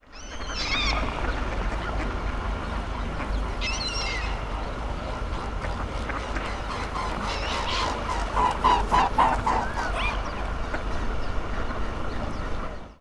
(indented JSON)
{
  "title": "berlin, paul linke ufer - swan flies by",
  "date": "2010-01-01 13:40:00",
  "description": "a swan flies along the landwehrkanal",
  "latitude": "52.49",
  "longitude": "13.43",
  "altitude": "35",
  "timezone": "Europe/Berlin"
}